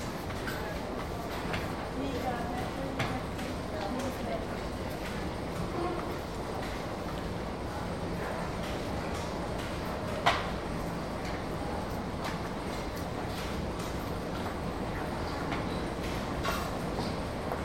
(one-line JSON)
{"title": "budapest, deli palyaudvar, metro station, ticket office", "date": "2010-02-12 18:56:00", "description": "at the ticket office of the metro station nearbye an escelator - distant traffic noise\ninternational city scapes and social ambiences", "latitude": "47.50", "longitude": "19.02", "altitude": "128", "timezone": "Europe/Berlin"}